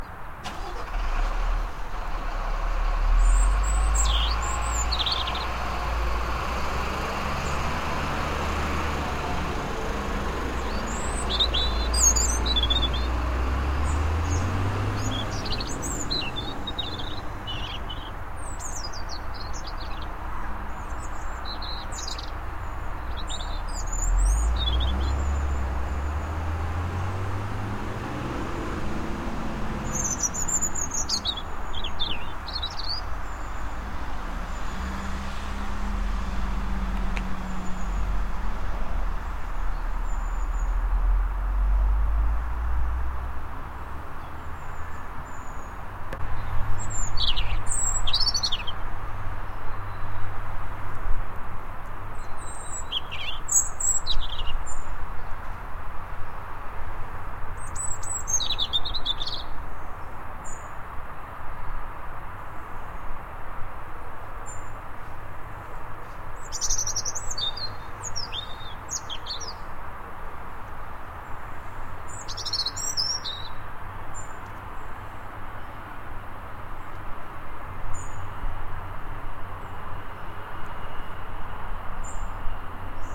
Robin singing near River Rother in Chesterfield
Sound of a Robin singing in a tree near the river Rother in Chesterfield
Derbyshire, UK